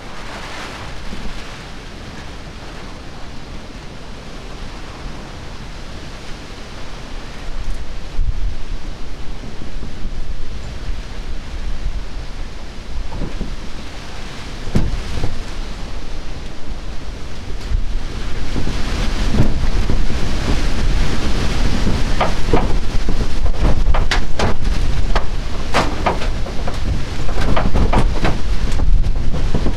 Chittaranjan Colony, Kolkata, West Bengal, India - Summer rain and storm
The mic is located on my rooftop under a tin shed. This is a typical stormy and rainy day in summer. Storm is quite common in summer. If the depression on Bay of Bengal is massive then it turns into cyclones. Every year this city face two to three cyclones, which are sometimes really massive and destructive.